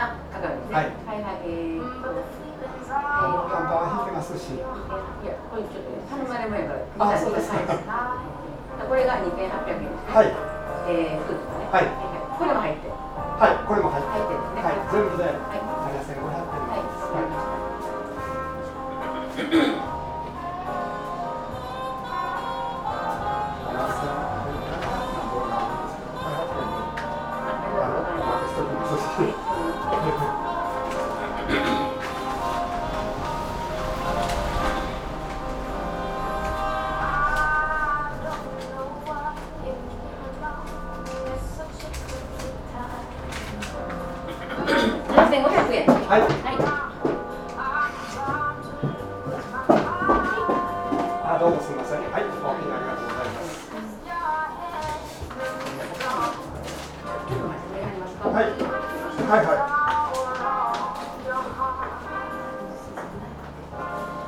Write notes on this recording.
only guest, left alone in a coffee bar on a slightly rainy afternoon, lady with red sweater went outside to take care of her things ...